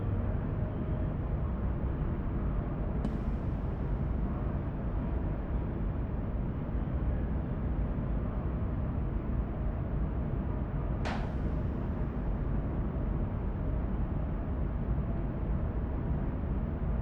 Wersten, Düsseldorf, Deutschland - Düsseldorf. Provinzial building, conference room
Inside the building of the insurance company Provinzial in a conference room entitled "Room Düsseldorf". The sonorous, constant sound of the room ventilation and some mysterious accents in the empty room.
This recording is part of the exhibition project - sonic states
soundmap nrw -topographic field recordings, social ambiences and art places